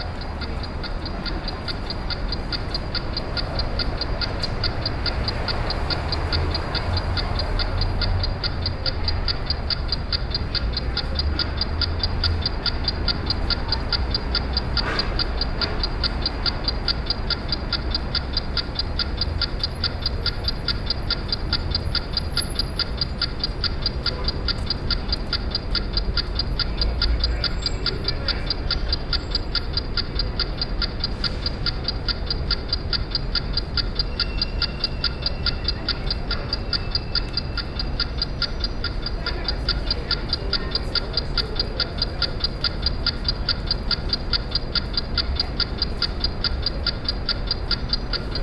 Shop of watches, New York
Shop of watches, urban traffic.